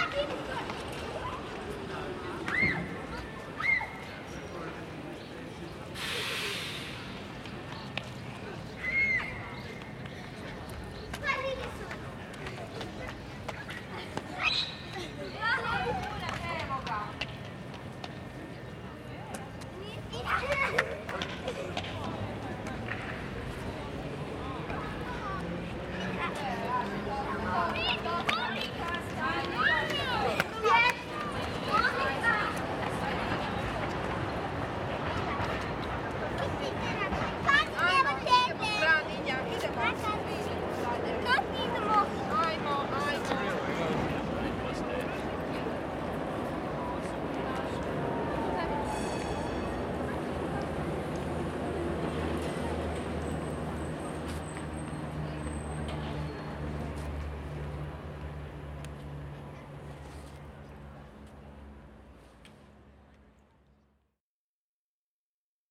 children, trams, pigeons in a big park, center of Zagreb
City of Zagreb, Croatia, 13 July 2001, 18:00